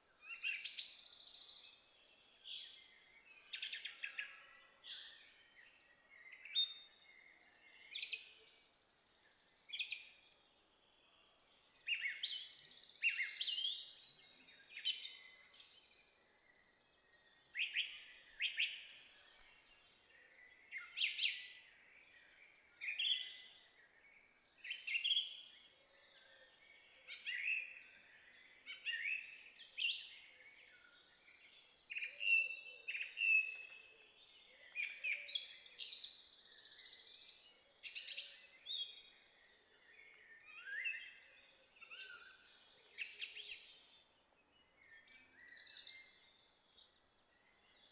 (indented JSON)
{
  "title": "Lithuania, at Alausas lake",
  "date": "2011-05-29 18:10:00",
  "description": "last days of spring...summer is here",
  "latitude": "55.60",
  "longitude": "25.71",
  "altitude": "145",
  "timezone": "Europe/Vilnius"
}